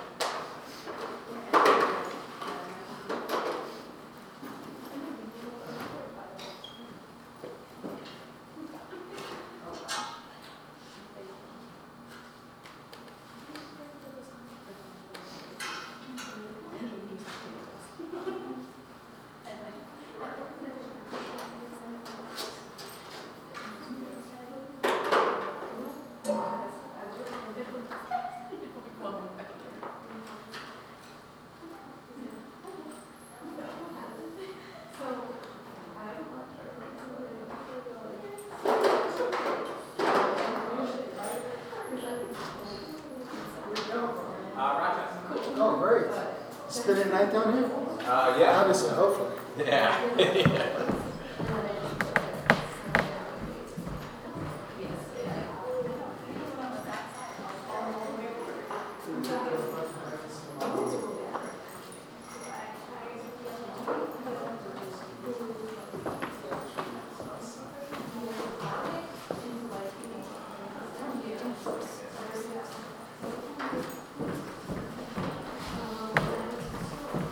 The Max and Nadia Shepard Recital Hall is a 125-seat hall named in honor of benefactors to the performing arts programs at New Paltz. It offers an intimate setting for chamber music performances and student recitals. This recording was taken just before a lecture was to begin. It was also taken using a Snowball condenser mic and edited through Garage Band on a Macbook Pro.
28 October, 18:00